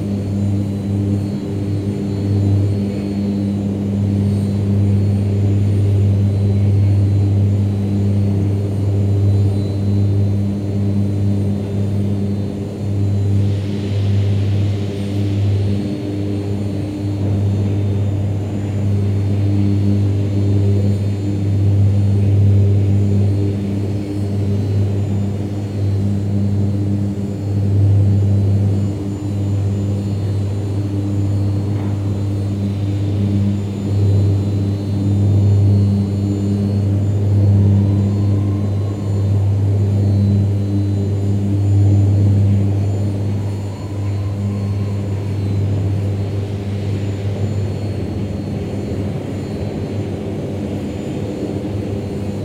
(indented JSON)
{
  "title": "Charleroi, Belgium - Industrial soundscape",
  "date": "2018-08-11 11:40:00",
  "description": "Industrial soundscape near the Thy-Marcinelle wire drawing factory.\n0:26 - Electric arc furnace reduce the scrap to cast iron.\n12:19 - Pure oxygen is injected in the Bessemer converter, it's a treatment of molten metal sulfides to produce steel and slag.\n19:58 - Unloading the scrap of the ELAN from LEMMER (nl) IMO 244620898.\n28:04 - Again the electric furnace.\n47:33 - Again the Bessemer converter.\nGood luck for the listening. Only one reassuring word : there's no neighborhood.",
  "latitude": "50.41",
  "longitude": "4.43",
  "altitude": "101",
  "timezone": "GMT+1"
}